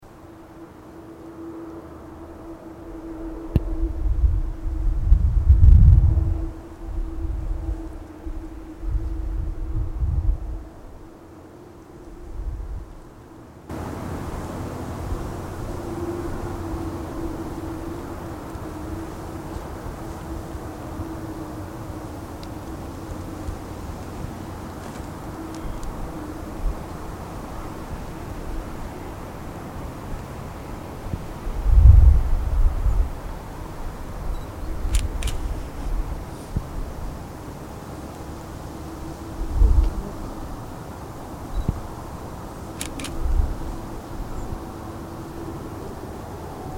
Socerb, Slovenia
Soundscape viewing the town